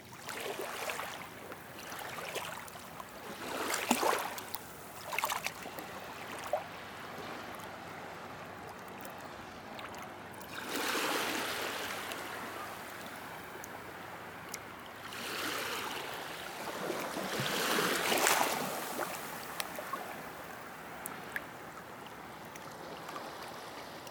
Les Portes-en-Ré, France - The whales beach on Ré island
Recording of the sea during one hour on the whales beach. At the beginning, teenagers are loudly playing. Just after I move on the right, behind big rocks. It's low tide. Waves are small, ambiance is quiet. Young children are playing on the beach or in the water. On the distant whales beacon, a storm thuds.
21 May